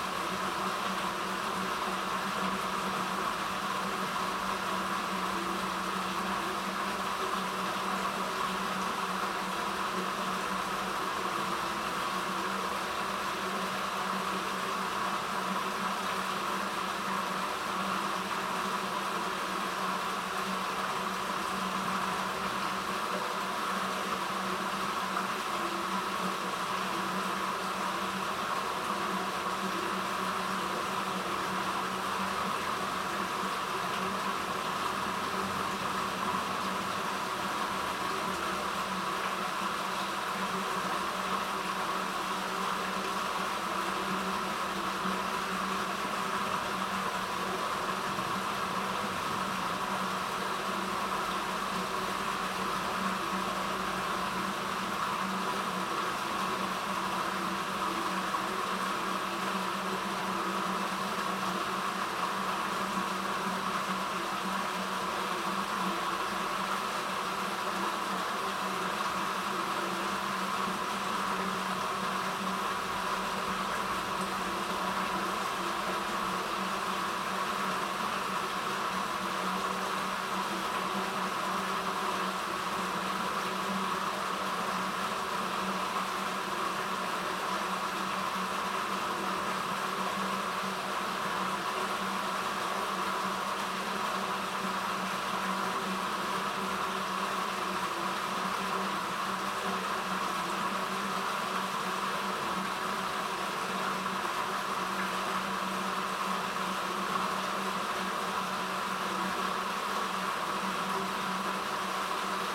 Derriaghy Riverpath Park, Dunmurry, Belfast, Lisburn, Reino Unido - Twinbrook Manhole
Small water stream running underground Twinbrook river path